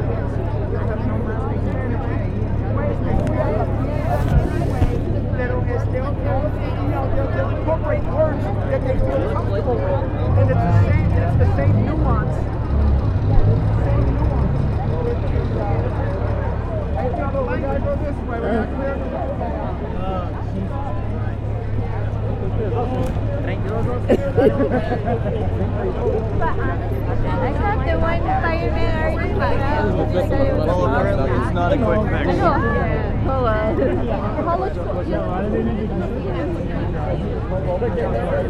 During my arabic class, firedrill, people hanging around outside, truman college, chatter